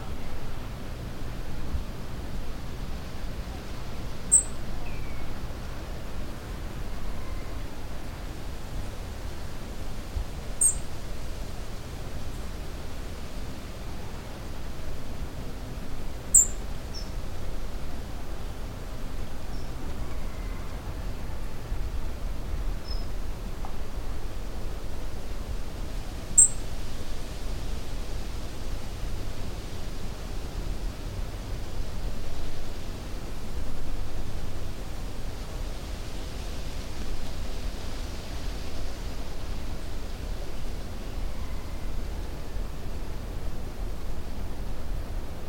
{"title": "Brownsea Island, Dorset, UK - Robin singing", "date": "2013-10-03 15:15:00", "description": "Robin recorded on Brownsea Island Dorset", "latitude": "50.69", "longitude": "-1.96", "altitude": "12", "timezone": "Europe/London"}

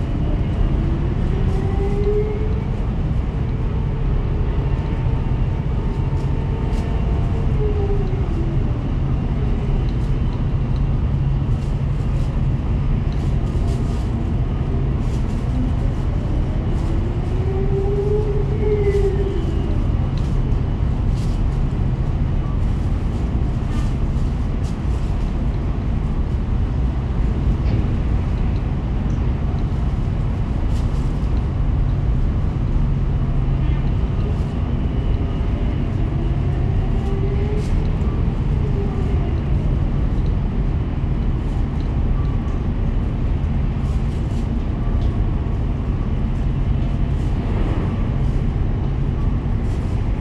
{"title": "Oakland Harbor, CA, USA - Middle Harbor Shoreline Park", "date": "2016-01-13 16:15:00", "description": "Recorded with a pair of DPA 4060s and a Marantz PMD 661", "latitude": "37.80", "longitude": "-122.33", "altitude": "2", "timezone": "America/Los_Angeles"}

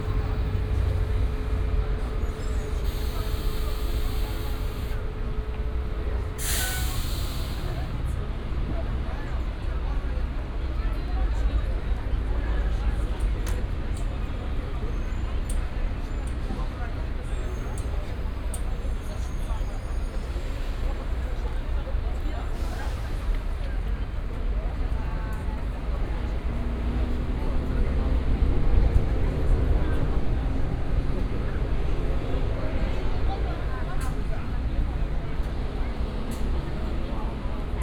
{"title": "Rome, in front of Stazione Termini - Termini bus depot", "date": "2014-09-02 16:19:00", "description": "(binaural)\nwaiting for a bus H to arrive. buses nearby operating their pneumatic suspension, which made a characteristic rhythm. people of many nationalities standing on the platform. entering the crowded bus.", "latitude": "41.90", "longitude": "12.50", "altitude": "60", "timezone": "Europe/Rome"}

